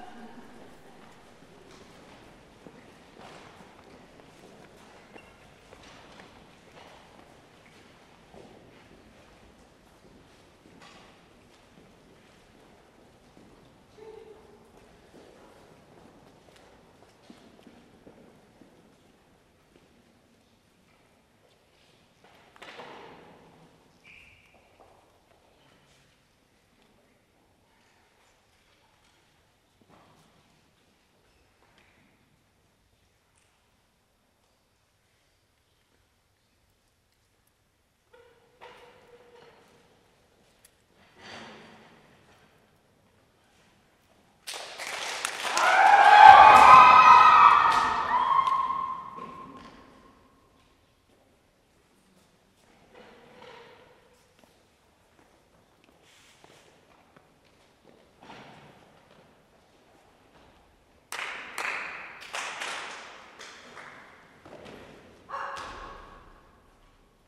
The performance, that involves the audience, were attended by 72 people. You hear parts of them shouting and humming.
Sofia, Bulgaria, 4 April 2011, 20:44